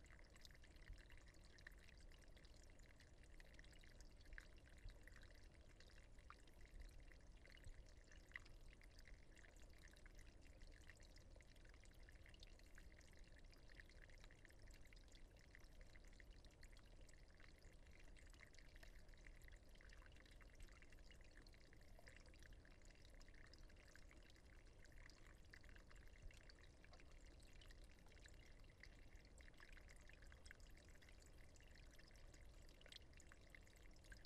Redwood creek, Oakland hills, Bay Area, Ca
creek in the Redwood park can be barely heard after very dry weather of this year in the Bay Area / La Nina effect, interesting is to compare a sound of a same creek / same spot from February last year after unusually wet weather / El Nino effect